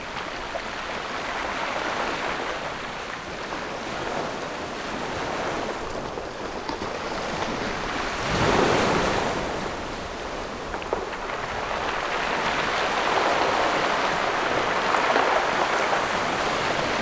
Sound wave, On the rocky coast
Zoom H6 +Rode NT4
連江縣, 福建省, Mainland - Taiwan Border, 14 October 2014